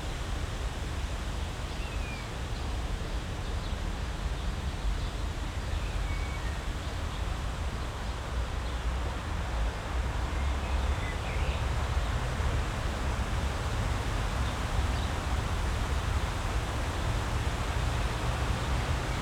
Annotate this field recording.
corner Beermannstr. / Matthesstr., training venue for dogs, seemingly closed. wind in birch trees, traffic hum. this area will loose ground in favour of the planned A100 motorway. Sonic exploration of areas affected by the planned federal motorway A100, Berlin. (SD702, Audio Technica BP4025)